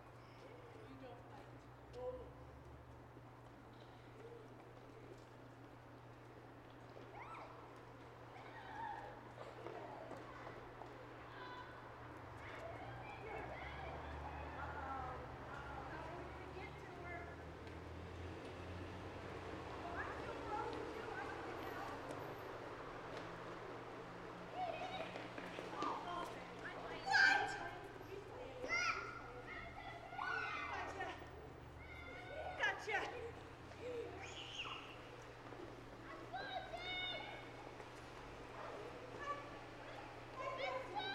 Fairhaven, Bellingham, WA, USA - Kids Playing Tag in Fairhaven Green
Kids playing tag in Fairhaven 'green'.
January 2016